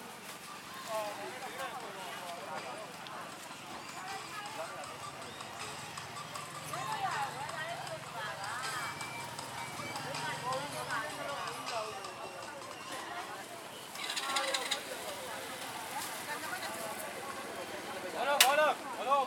St, St, Chanayetharsan Tsp, Mandalay, Myanmar (Birma) - market. mandalay.
market. mandalay. 27th street.